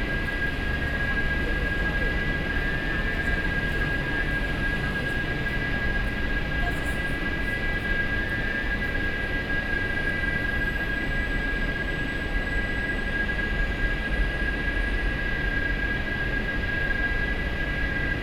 National Taiwan University Hospital Station, Taipei - air conditioning noise
outside of the MRT station, air conditioning noise, Sony PCM D50 + Soundman OKM II